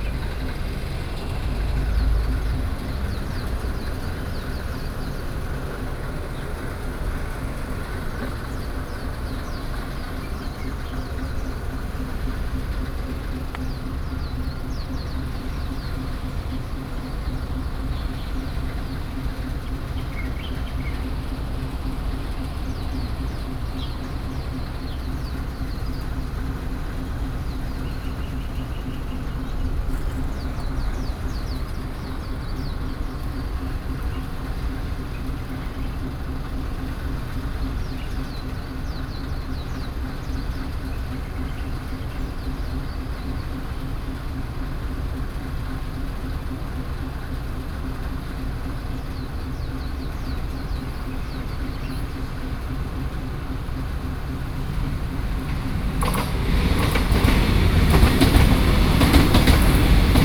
Yingge Dist., New Taipei City - Traveling by train
Railway, Traveling by train
Sony PCM D50+ Soundman OKM II